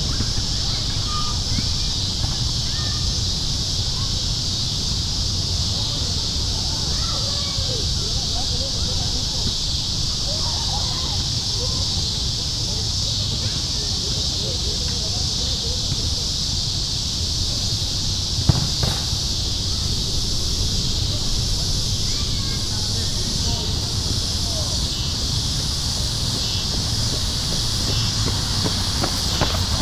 Burning Bush Park Cicada Swarm
Cicada in the suburbs of Chicago, swarming. Summer 2011. Mt. Prospect, IL, insects, park, soccer game, cars, traffic